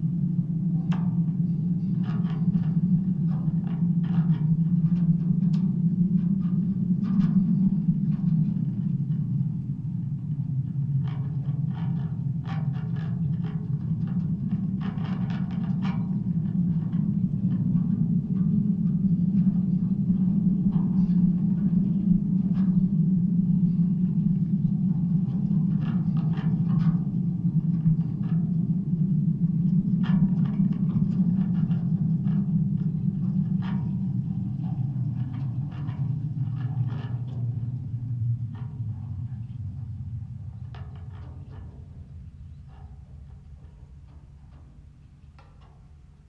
wind on a wire fence, Nodar Portugal
Castro Daire, Portugal